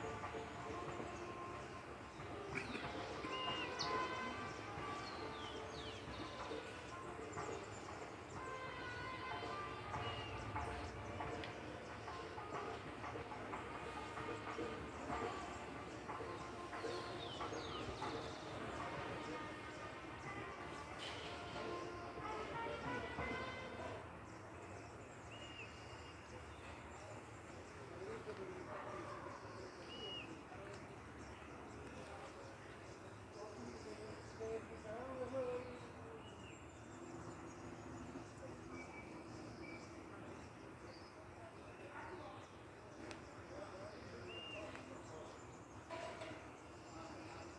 Parque De La Sal, Zipaquirá, Cundinamarca, Colombia - Mine of the Salt Cathedral of Zipaquirá - Outside
In this audio you will hear the outside of the mine of the Salt Cathedral of Zipaquirá. This point is the tourist area and meeting point of all visitors, where you can carry out various activities, on site you can hear the wind breeze widing the trees and singing various species of birds, people carrying out activities and in the background the practice of an orchestra indigenous to the country.
Región Andina, Colombia, 22 May 2021